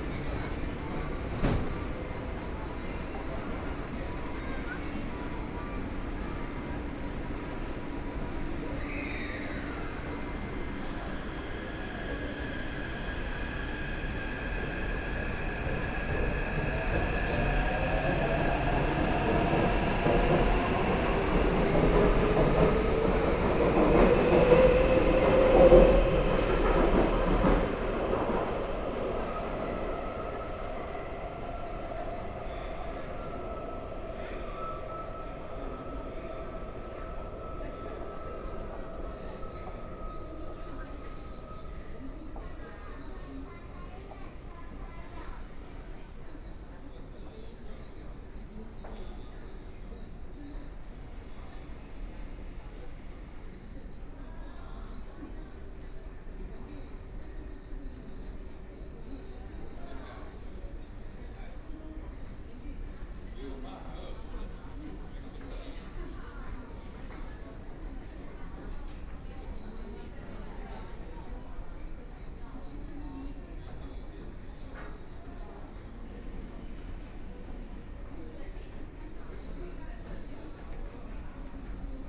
Minquan W. Rd. Station, Taipei - On the platform
On the platform waiting for the train, Binaural recordings, Sony PCM D50 + Soundman OKM II